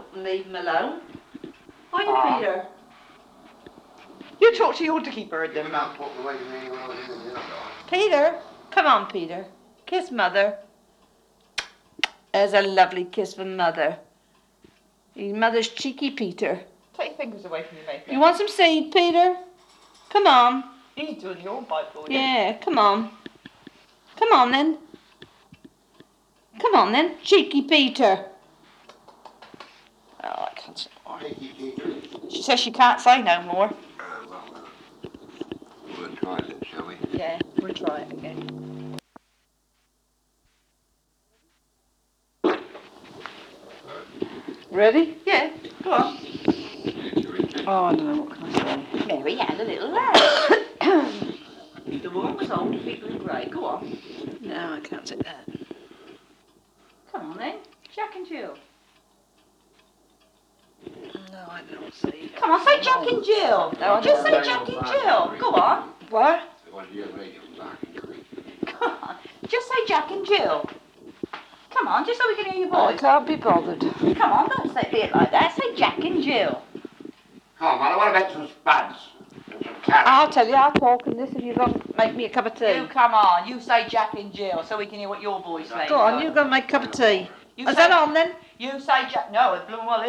Netley, Hampshire, UK - 'Our First tape' 1965
This is transcription of reel to reel footage of my grand parents and great grand parents recorded in 1965, but transcribed late last year
Southampton, Hampshire, UK, November 2012